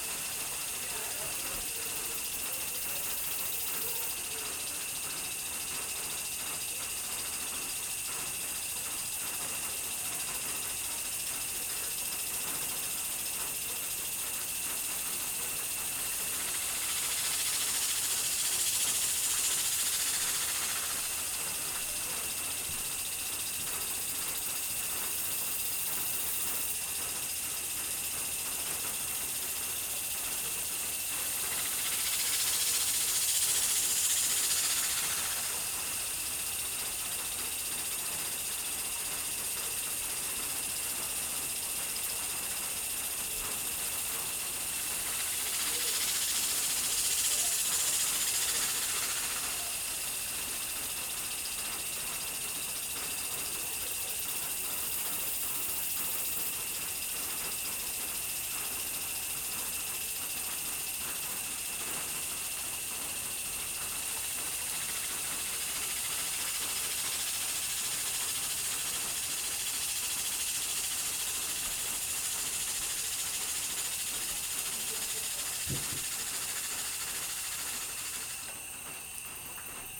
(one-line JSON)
{"title": "Fayette County, TX, USA - Sprinklers & Insects", "date": "2015-06-22 09:00:00", "description": "Recorded during early summer on a humid night in Ledbetter, TX. While I was recording the remnants of rain and the growing chorus of insects, a sprinkler system turned on. Recorded with a Marantz PMD 661 and a stereo pair of DPA 4060's.", "latitude": "30.13", "longitude": "-96.82", "altitude": "136", "timezone": "America/Chicago"}